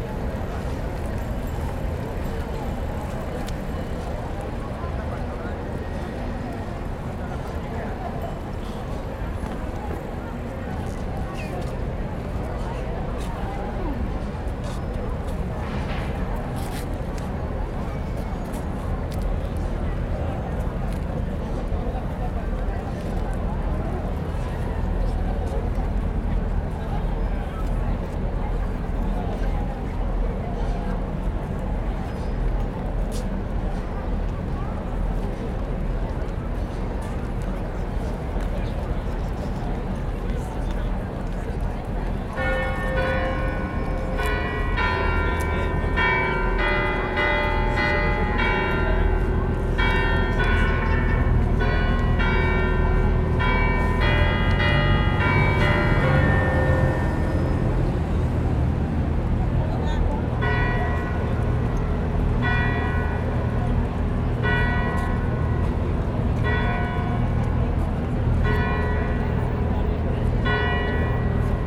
Notre Dame Cathedral Paris - Notre Dame Cathedral Bells, Paris
Tourists, Notre Dame bells, you wait and just as the melody begins the truck collecting the rubbish/garbage arrives!
24 July 2010, 18:00